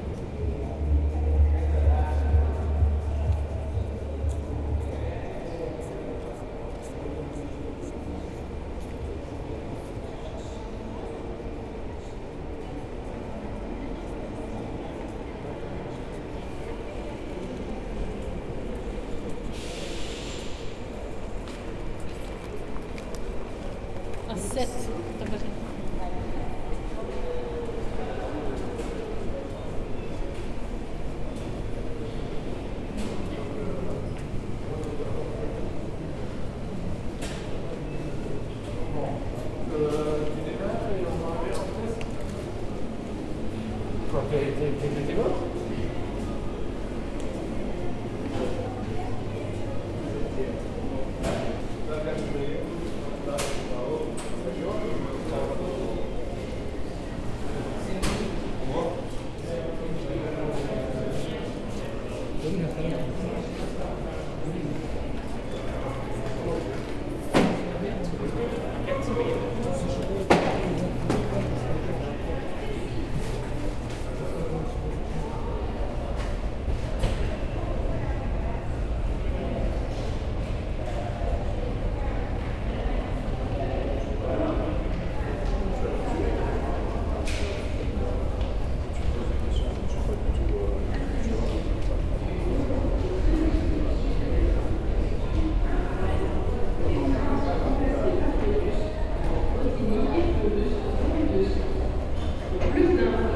{"title": "Charleroi, Belgium - Charleroi station", "date": "2018-08-11 14:10:00", "description": "Walking in the Charleroi train station, and after in the Tramway station. Quite the same sounds as Flavien Gillié who was at the same place a year ago.", "latitude": "50.41", "longitude": "4.44", "altitude": "101", "timezone": "GMT+1"}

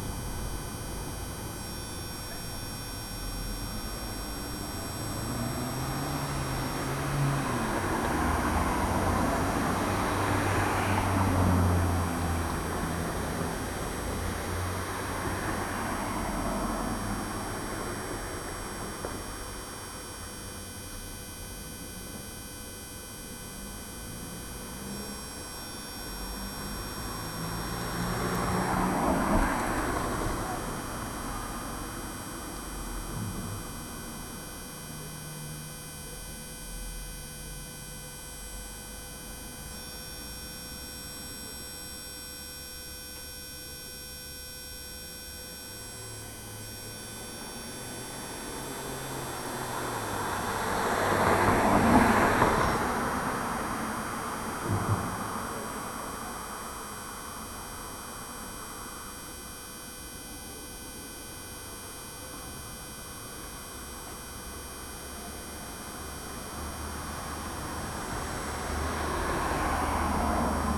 {"title": "Leipzig, Demmeringstr. - power station", "date": "2011-10-29 16:15:00", "description": "buzz of a small tram power distribution station aside the street.", "latitude": "51.34", "longitude": "12.33", "altitude": "114", "timezone": "Europe/Berlin"}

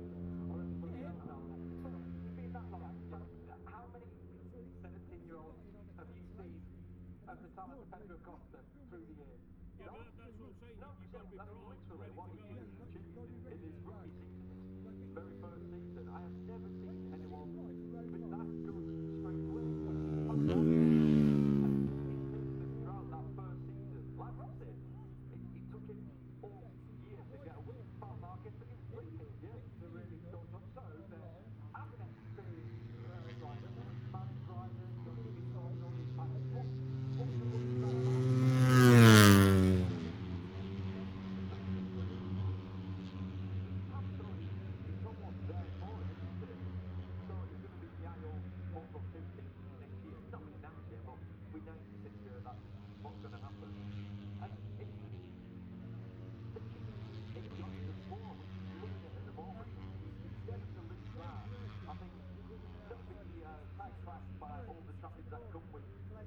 {"title": "Silverstone Circuit, Towcester, UK - british motorcycle grand prix 2021 ... moto three ...", "date": "2021-08-27 13:15:00", "description": "moto three free practice two ... maggotts ... olympus ls 14 integral mics ...", "latitude": "52.07", "longitude": "-1.01", "altitude": "158", "timezone": "Europe/London"}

